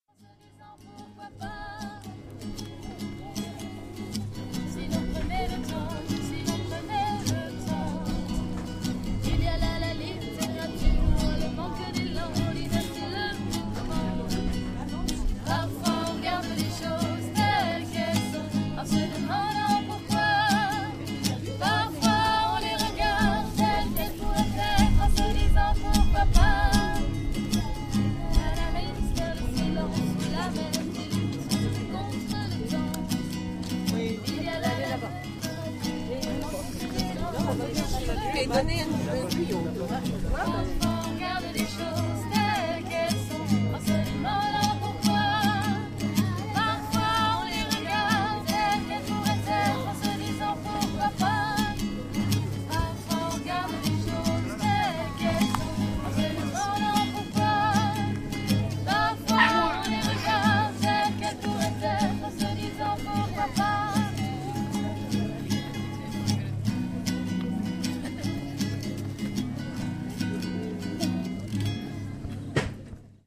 Ploubazlanec public market
2 young girls singing il y a, by Vanessa Paradis.
Ploubazlanec, France, 1 July 2010